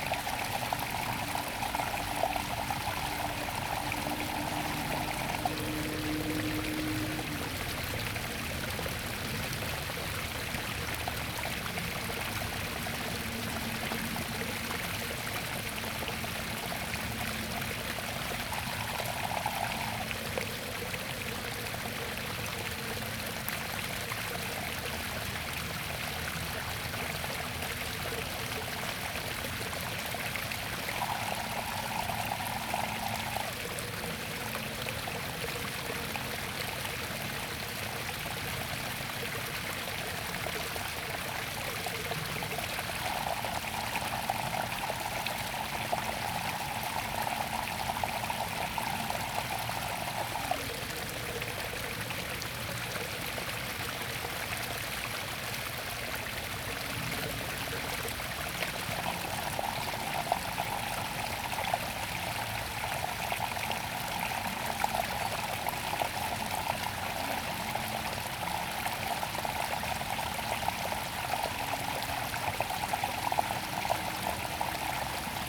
Taomi Line., Puli Township - The sound of water
The sound of water
Zoom H2n MS+XY